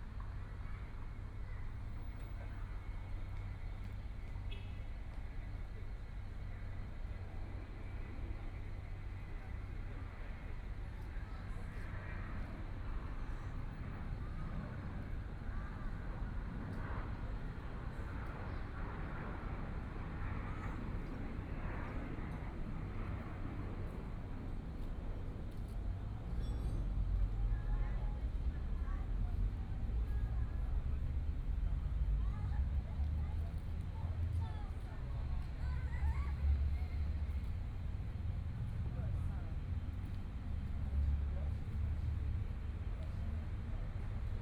慈濟醫院, Hualien City - Outside the hospital
Outside the hospital, Birds sound, Traffic Sound, Environmental sounds
Please turn up the volume
Binaural recordings, Zoom H4n+ Soundman OKM II
Hualian City, 慈濟醫院, 24 February 2014